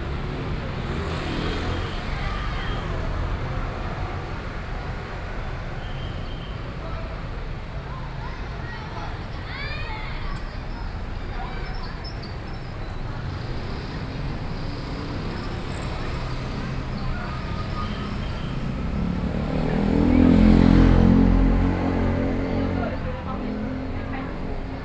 Sec., Zhongshan Rd., 宜蘭市中正里 - At the roadside
Traffic Sound, At the roadside
Sony PCM D50+ Soundman OKM II